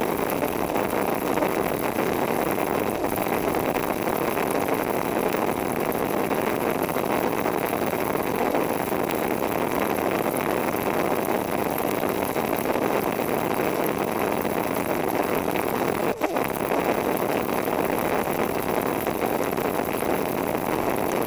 Mont-Saint-Guibert, Belgique - The dump
This is the biggest dump of Belgium. There's a leakage in a biogas pipe.